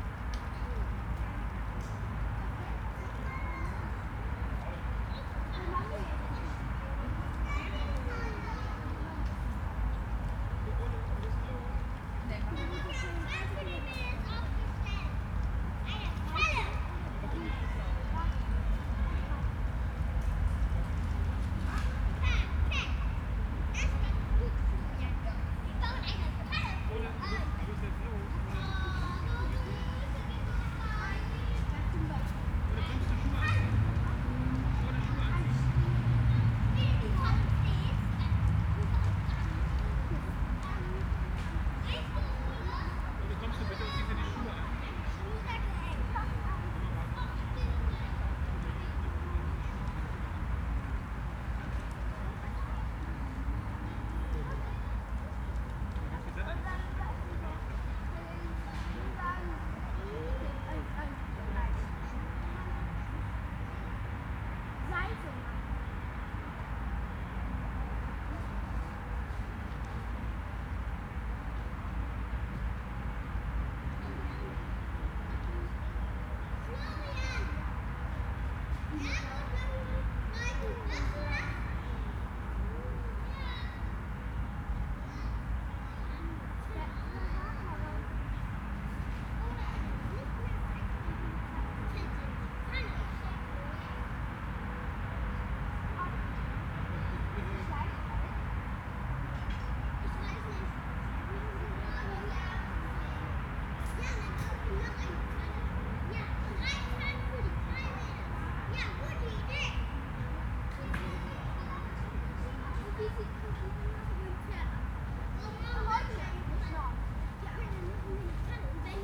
The green areas amongst apartment blocks here are quiet spaces in the center of the city. There is not so much activity. Busy roads are distant while children playing close by. A helicopter passes by sounding loud for a moment. Sirens come and go.
Trams rumble on the main roads contributing to the constant bass frequencies that permeate many city areas.
Deutschland, 1 September 2021